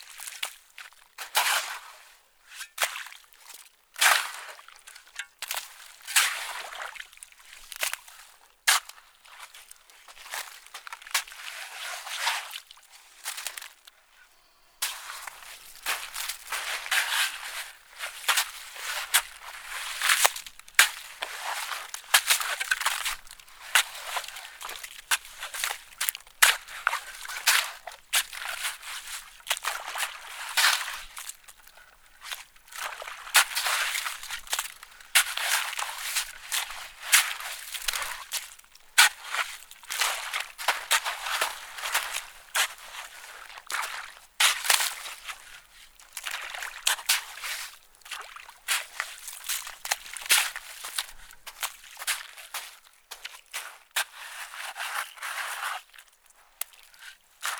Keeler, CA, USA - Shoveling in Owens Lake bacterial pond
Metabolic Studio Sonic Division Archives:
Shoveling in bacterial pond on Owens Lake. Recorded with Zoom H4N recorder